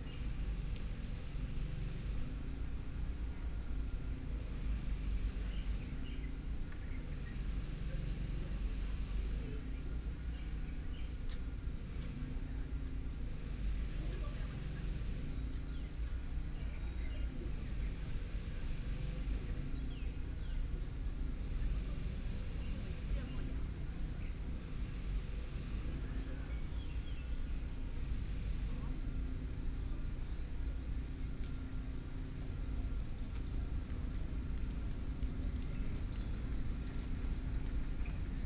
鹽埕區新化里, Kaoshiung City - Sitting on the roadside
Sitting on the roadside, Traffic Sound, Tourist, Birdsong, Bicycle Sound
Binaural recordings, Sony PCM D50 + Soundman OKM II
Kaohsiung City, Taiwan, 21 May